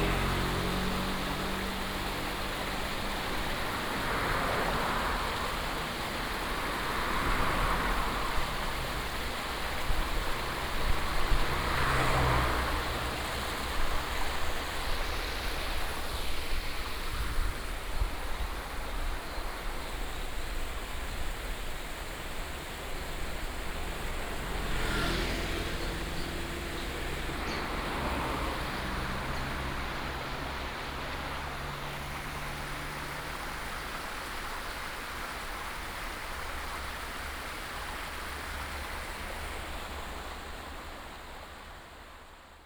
大鶯路, Daxi Dist., Taoyuan City - stream
stream, Cicada and bird sound, Traffic sound